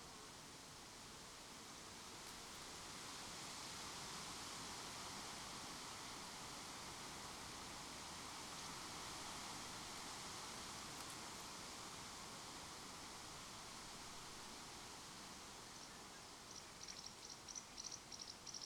Lithuania, at Gimziskiai mound, wind drama
bush at the lake, some singing birds and then gust of wind arises...